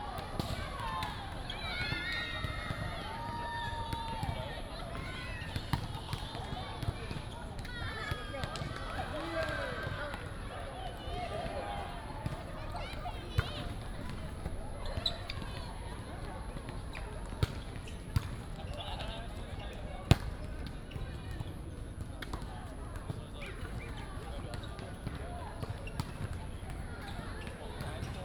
National Taiwan University, Taipei City - Next to the volleyball court
Next to the volleyball court
Da’an District, Taipei City, Taiwan, 25 July